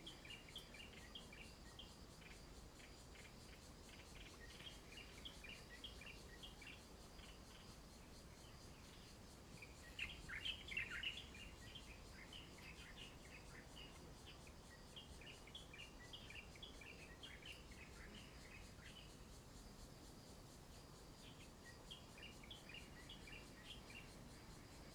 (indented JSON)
{
  "title": "卑南里, Taitung City - Birds and Aircraft",
  "date": "2014-09-09 08:43:00",
  "description": "Birdsong, Traffic Sound, Aircraft flying through, The weather is very hot\nZoom H2n MS +XY",
  "latitude": "22.78",
  "longitude": "121.11",
  "altitude": "36",
  "timezone": "Asia/Taipei"
}